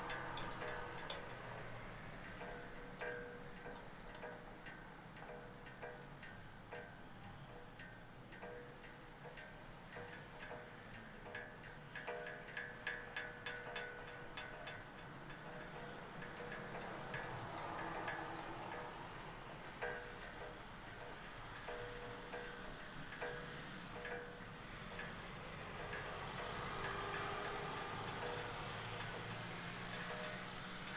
Utena, Lithuania, flag poles in wintery wind
Quarantine town. Winter and snow. Flag poles playing in the wind.